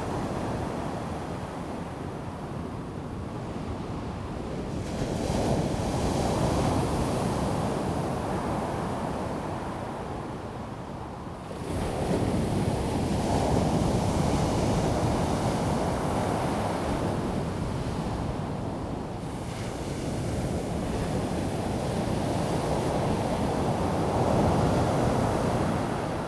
河溝尾, 太麻里鄉 Taitung County - the waves
At the beach, Sound of the waves
Zoom H2n MS+XY